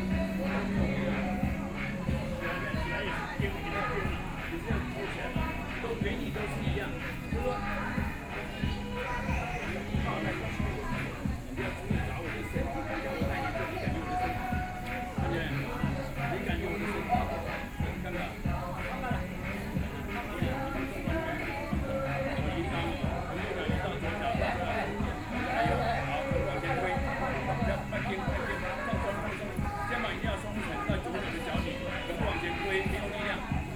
Hutoushan Park, Taoyuan County - dancing
Group of elderly people were dancing, Sony PCM D50 + Soundman OKM II